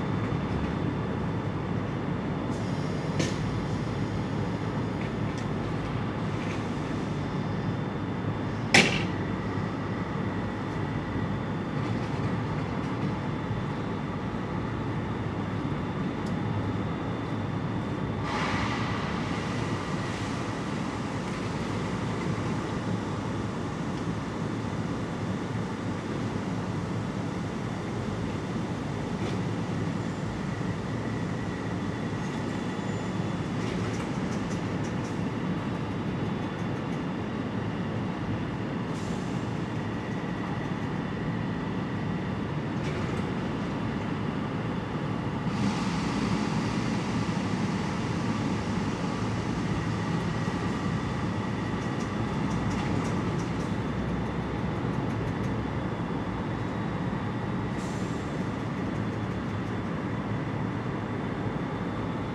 Elephant & Catle, London, UK - Resting Trains
Recorded with a pair of DPA 4060s and a Marantz PMD661 — facing the London Road Tube Depot from a third story window.